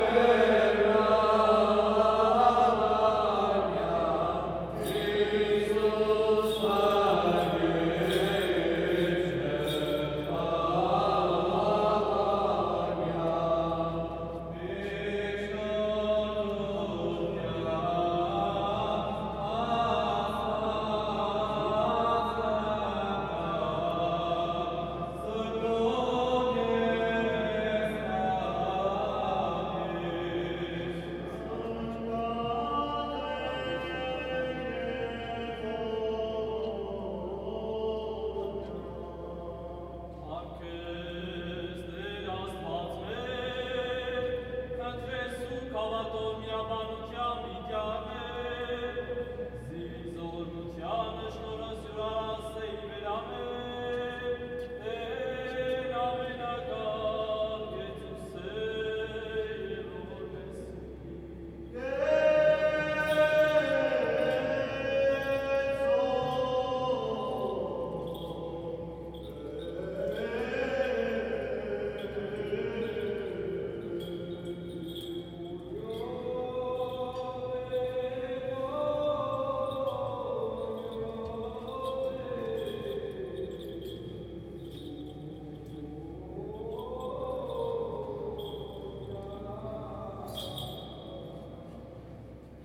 Jerusalem, Israel, Church of Holy Sepulcher - Chanting- Church of the Holy Sepulchre-2
A Zoom recording of the second part of the daily 17:00 pm chanting at the Church of the Holy Sepulchre, Christian Quarter of the Old City of Jerusalem
12 November 2021, 17:17